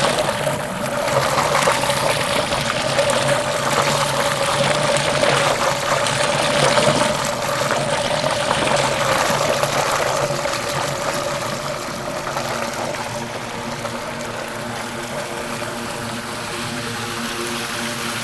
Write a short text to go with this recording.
vír u stavidla odkaliště v bývalých Počeradech, dnes ČEZ elektrárna.nahráno na Zoom H2N u odvětrávací roury.